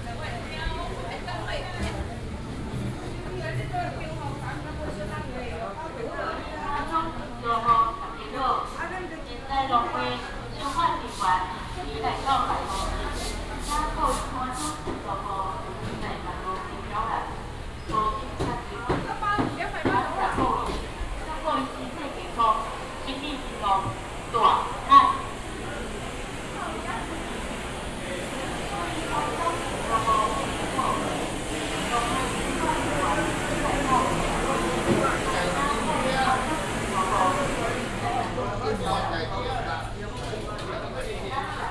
indoor markets
Binaural recordings
Sony PCM D100+ Soundman OKM II